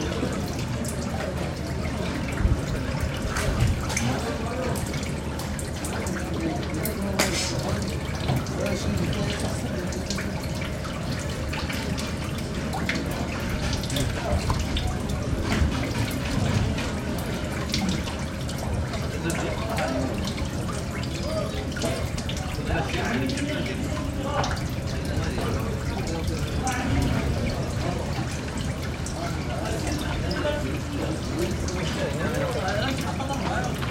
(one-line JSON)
{"title": "Sidi Ifni, Port, Fishing Port 2", "date": "2006-09-07 10:02:00", "description": "Africa, Morocco, Sidi Ifni, boat, port", "latitude": "29.36", "longitude": "-10.19", "timezone": "Africa/Casablanca"}